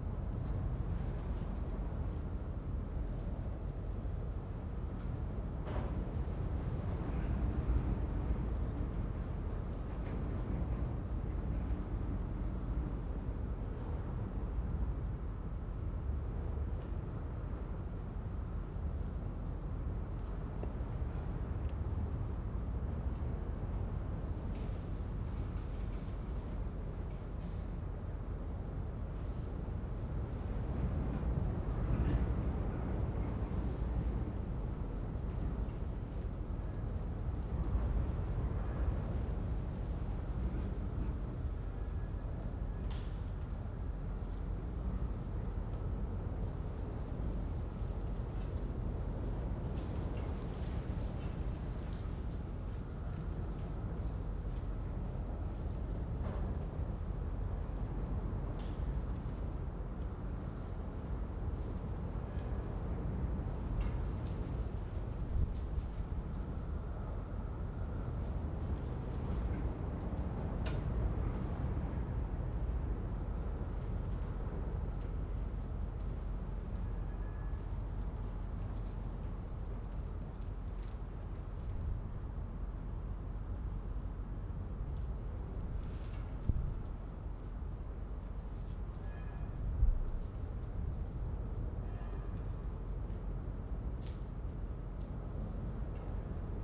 Punto Franco Nord, Trieste, Italy - derelict workshop, night, wind
wind heard within a abandoned workshop bulding at night
10 September 2013